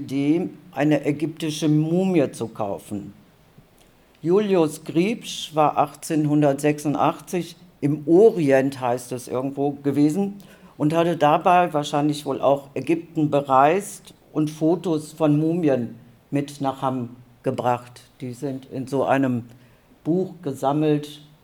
Der Sitzungssaal im Technischen Rathaus ist nach Corona-Standarts voll besetzt. Die Museumsarchivarin, Maria Perrefort, hat die Geschichte des Hammer Mumienvereins recherchiert und berichtet mit einigen eindruecklichen Zitaten aus der Zeit. Es geht um Spuren des Kolonialismus in Hamm. In der allerersten Veranstaltung dieser Art werden einige solcher Spuren zusammengetragen, gesichert, diskutiert. Was koennten weitere Schritte in dieser Spurensuche und Aufarbeitung sein?
The boardroom in the Technical Town Hall is full to Corona Law standards. Museum archivist, Maria Perrefort, has researched the history of the Mummy Society in Hamm and reports back with some thought-provoking quotes from the time. The evening's topic is traces of colonialism in Hamm. In the very first event of this kind, some such traces are collected, secured, discussed. What could be further steps in this search for traces and reappraisal?
For info to the event, see also